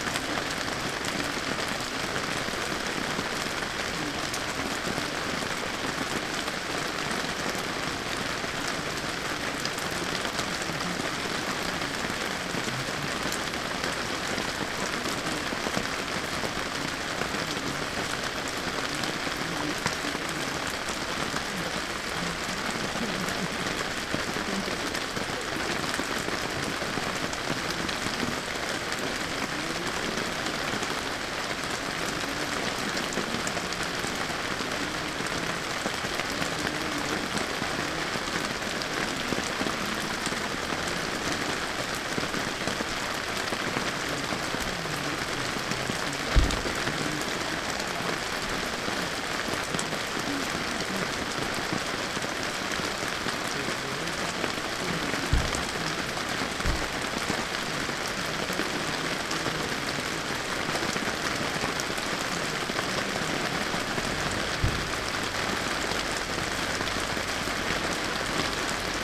Alouette campground - Golden Ears Provincial Park - Camping sous la pluie
Le début d'une longue nuit pluvieuse telle qu'on pouvait l'entendre de l'intérieur de la tente.